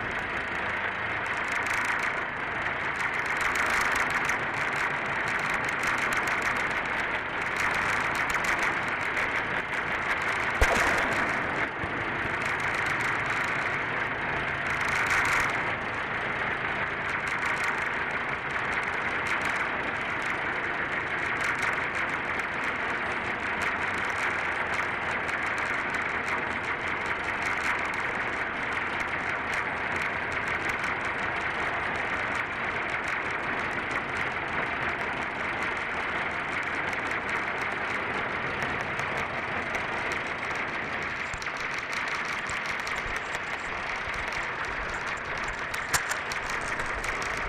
Mega Bridge, Bangkok lifting gantry
Samut Prakan, Thailand